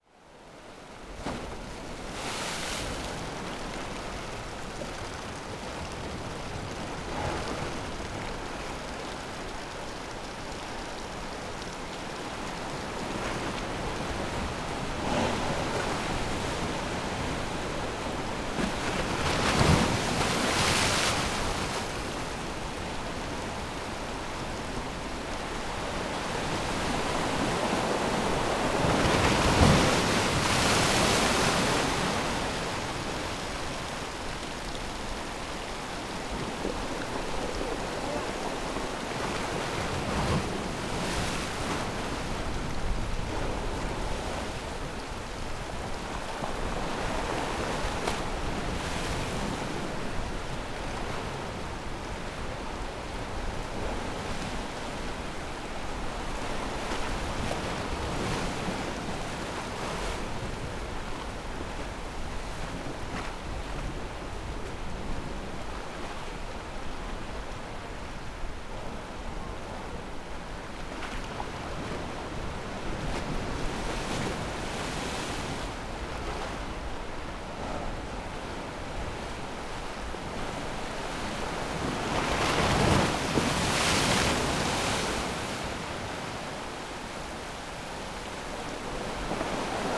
Funchal, hotel district, pier - wave punch
strong waves pushed into a corner, slamming into a concrete wall and rising a few meters above the pier.
9 May 2015, 11:22